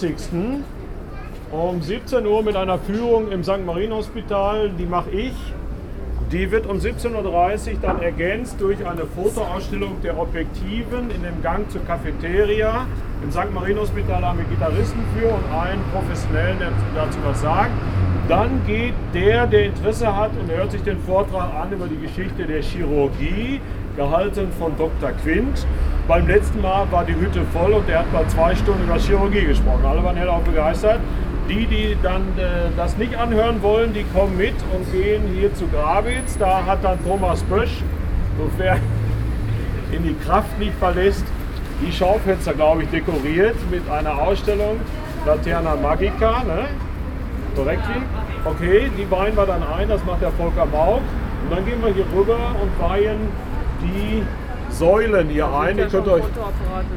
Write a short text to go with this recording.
We are joining here a guided tour through the city’s art and artists’ quartiers, the “Martin-Luther Viertel” in Hamm. Chairman Werner Reumke leads members of the area’s support associations (“Förderverein des Martin-Luther-Viertels”) through the neighborhood. Only two weeks to go till the big annual Arts-Festival “La Fete”… Wir folgen hier einer ausserordentlichen Stadtführung durch das Martin-Luther-Viertel, das Kunst und Künstlerviertel der Stadt. Werner Reumke, Vorsitzender des Fördervereins begeht das Quatier zusammen mit Vereinsmitgliedern. Nur noch zwei Wochen bis zum grossen jährlichen Kunst- und Kulturfest “La Fete”… recordings are archived at: